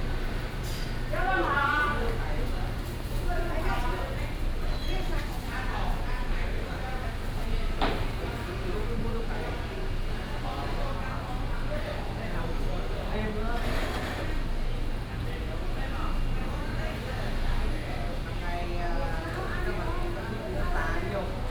福安市場, Taichung City - in the Market
walking in the Public retail market, Binaural recordings, Sony PCM D100+ Soundman OKM II
Taichung City, Taiwan